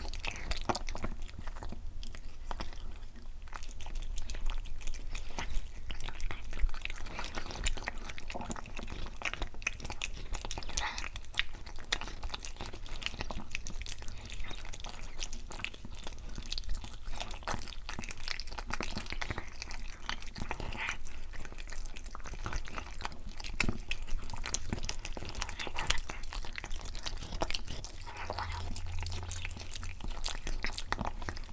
My two cats, eating, early in the morning. This could perhaps be considered as an horror film ;-)